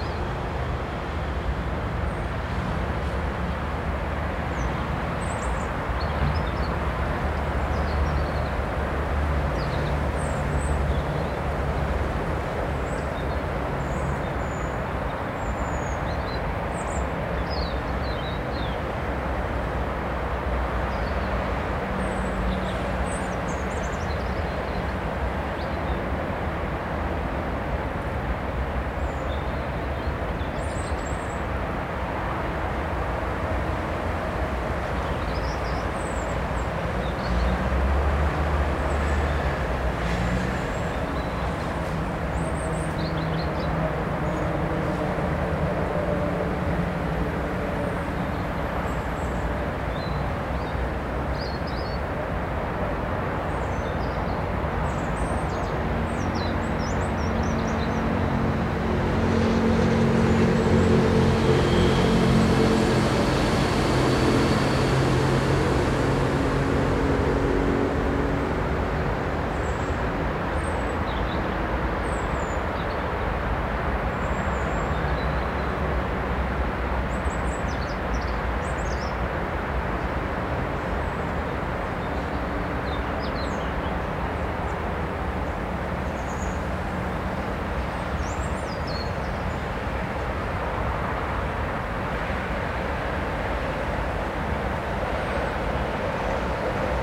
highway, birds, wind in trees, river
metro, nature, car, truck, ambulance
France métropolitaine, France, 8 November